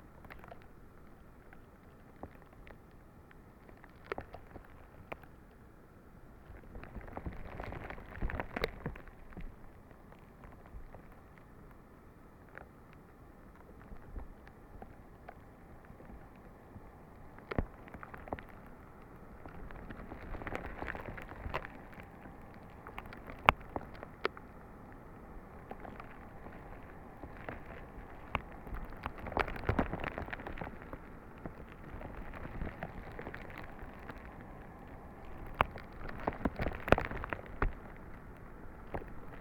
lake Alausas, Lithuania, ice
hydrophone between the broken ice on the lake